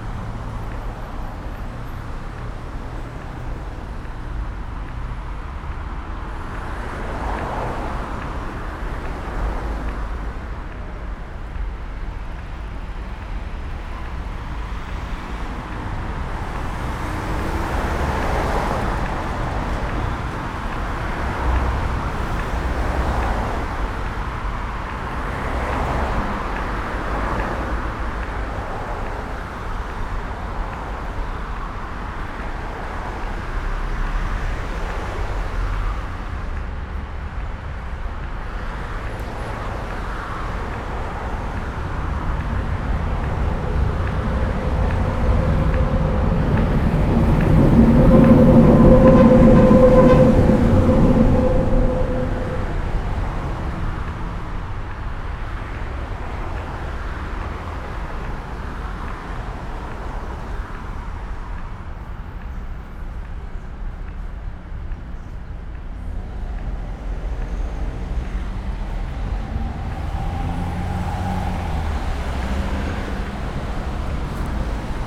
Gitschiner Staße/ Lindenstraße, Berlin, Deutschland - everyday life along gitschner street
the audible pedestrian traffic light signal beat keeps the space under the subway in the crossing area together.